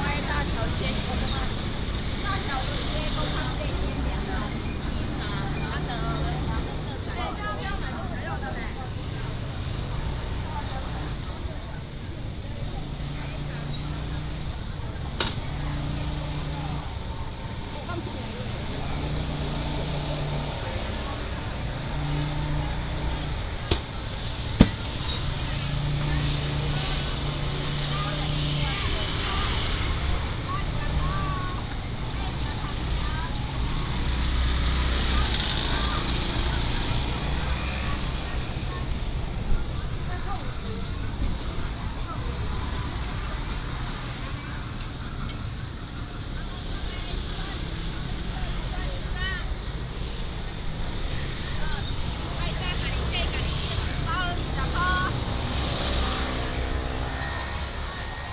Ln., Yumin St., North Dist., Tainan City - Outdoor market
Outdoor market, Traffic sound, Sellers selling sound
North District, Tainan City, Taiwan, 18 February 2017